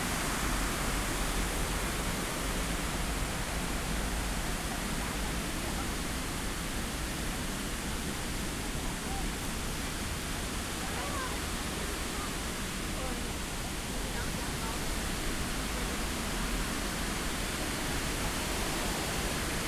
Viktoriapark, Kreuzberg, Berlin - artificial waterfall
Victoriapark, Berlin Kreuzberg. In summer an artificial waterfall originates at the foot of the monument and continues down the hillside to the intersection of Großbeerenstraße and Kreuzbergstraße.
(Sony PCM D50, DPA4060)